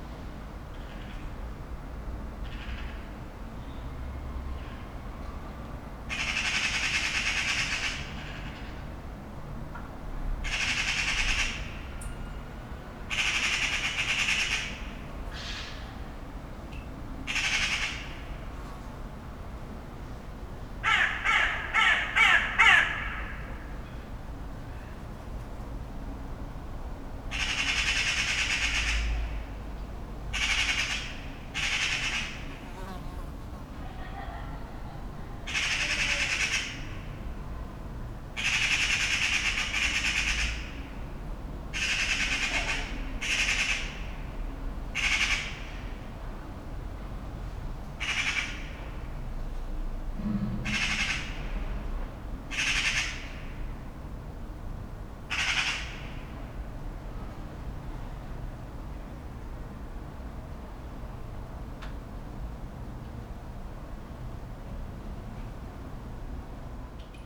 Berlin Bürknerstr., backyard window - magpies chatting
a bunch of young magpies make a fuss in the trees.
friendly late summer friday afternoon
(PCM D50)
Berlin, Germany, 18 September 2012, ~17:00